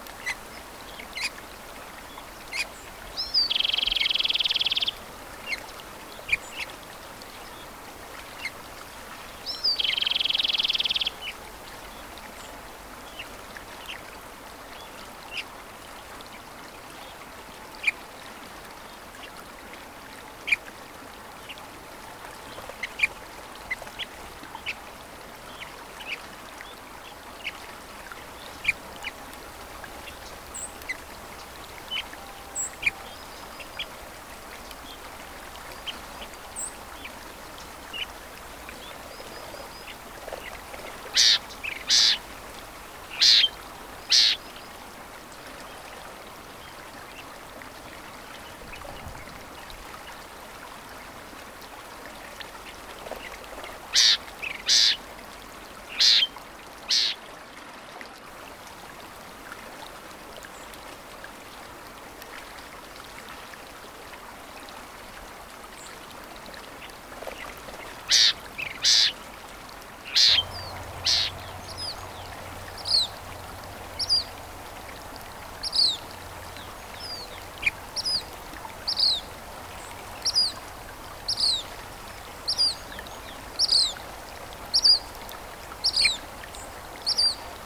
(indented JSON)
{
  "title": "Lone Pine, CA, USA - Birds at Sunrise on Owen's River",
  "date": "2022-08-26 06:10:00",
  "description": "Metabolic Studio Sonic Division Archives:\nDawn chorus of birds on Owen's River during sunrise. One mic placed near a tree and another mic placed near the surface of the river",
  "latitude": "36.62",
  "longitude": "-118.04",
  "altitude": "1106",
  "timezone": "America/Los_Angeles"
}